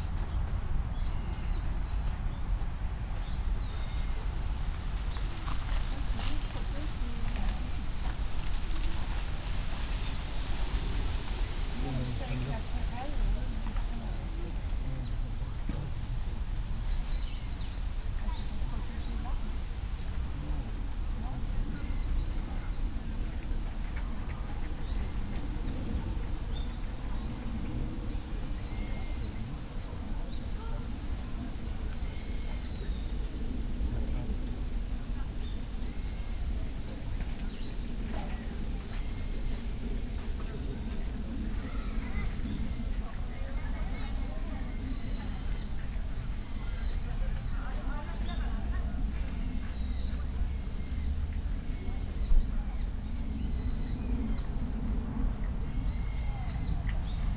pond, Ryōan-ji garden, Kyoto - kaki
gardens sonority
wind in trees, birds, steps, gravel path